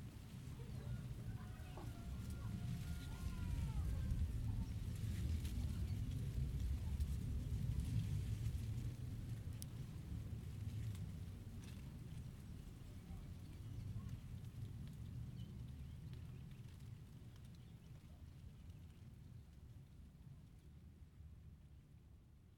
San Vicente, Antioquia, Colombia - The wind that shakes the Guaduas
Field record made in rural areas close to San Vicente, Antioquia, Colombia.
Guadua's trees been shaked by the wind.
Inner microphones Zoom H2n placed 1m over the ground.
XY mode.
15 September 2013, 10:36